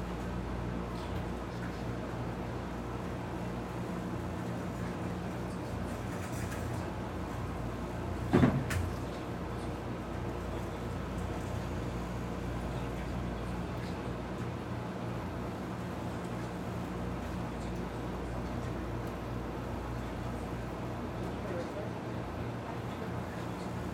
Catalpa Ave, Flushing, NY, USA - Tina's Laundromat
Laundromat ambience in Ridgewood, Queens.